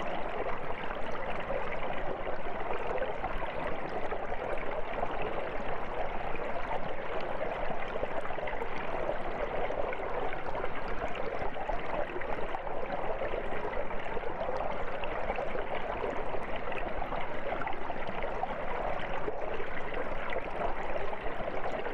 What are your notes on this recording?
Sounds recorded as part of a sound walk using wireless headphones with Penny Bridge Academy. Two Hydrophones in the beck under a wooden footbridge. It has been very dry so the beck is pretty low.